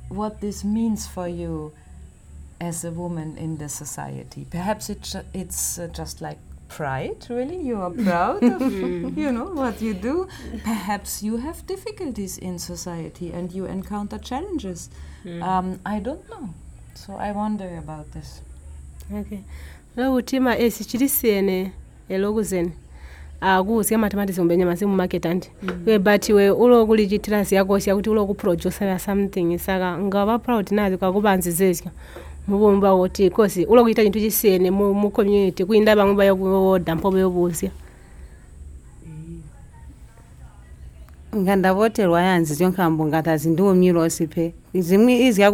Binga Craft Centre, Binga, Zimbabwe - Barbara Mudimba - I'm a producer...
We are together with Barbara Mudimba and the sales assistant, Viola Mwembe at the Craft Centre in Binga. Viola translates from the ChiTonga. Barbara is a woman from Kariangwe, a village in the Binga district. She started weaving baskets as a means of survival, providing for herself and her family. Here, she tells us about what it means to her being a creative producer.
Barbara used to belong to a club of women basket-weavers in Kariangwe.